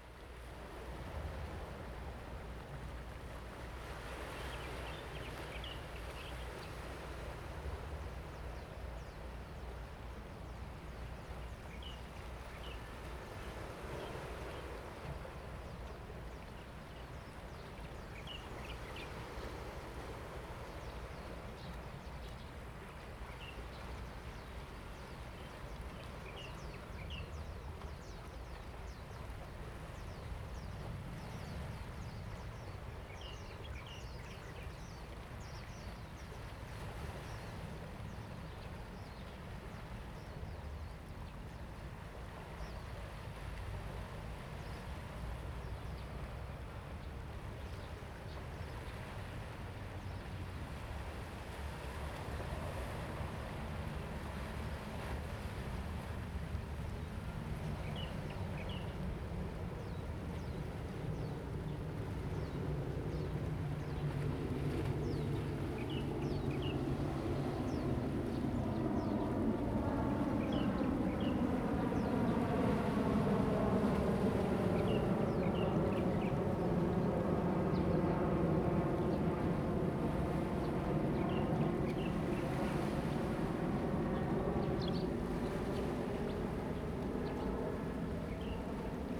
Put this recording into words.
On the coast, The sound of birds, Sound of the waves, High tide time, aircraft, Zoom H2n MS+XY